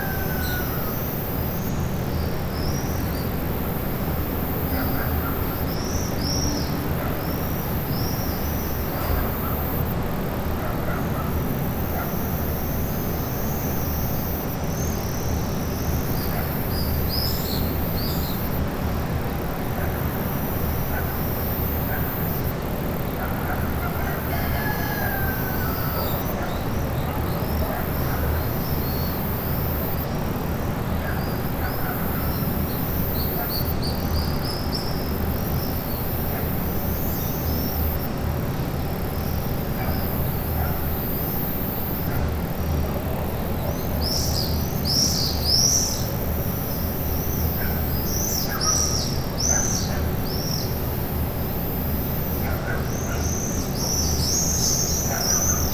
Fourth-floor balcony. Sunny morning.
Tech.: Sony ECM-MS2 -> Tascam DR-680.